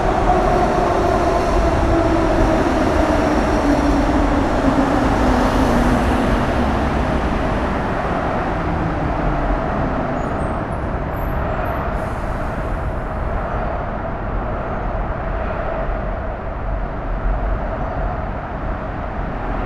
berlin, bundesplatz: unter autobahnbrücke - the city, the country & me: under motorway bridge
strange and unfriendly place: unlighted parking under motorway bridge
the city, the country & me: april 10, 2013